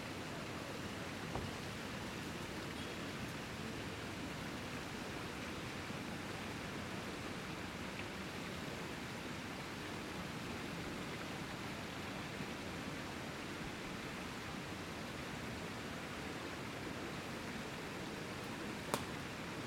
Pl. des Vosges, Paris, France - AMB PARIS EVENING PLACE DES VOSGES FOUNTAINS MS SCHOEPS MATRICED
This is a recording of the famous 'Place des Vosges' located in the 3th district in Paris. Microphones are pointed in the direction of the water fountains. I used Schoeps MS microphones (CMC5 - MK4 - MK8) and a Sound Devices Mixpre6.
22 February 2022, 18:45